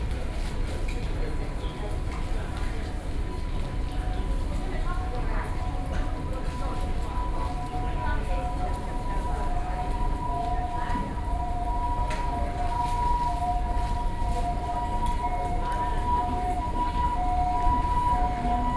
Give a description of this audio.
At the metro stations., People waiting and traveling walking, (Sound and Taiwan -Taiwan SoundMap project/SoundMap20121129-10), Binaural recordings, Sony PCM D50 + Soundman OKM II